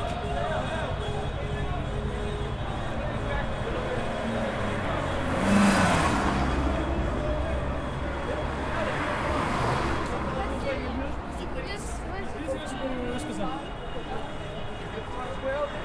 Lisbon, Marques Pombal, football final cup
Football_cup_final, Benfica, Lisbon, people, singing, yelling